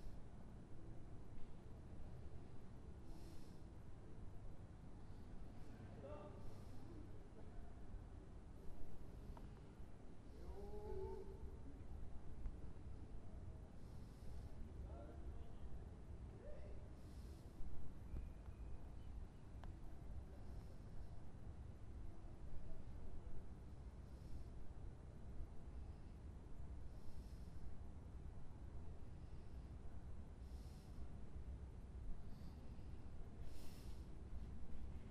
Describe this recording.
Sur les hauteur de Mons (BE) entre Sainte Waudru et le beffro, vers 1h du matin, psté sur un bac public.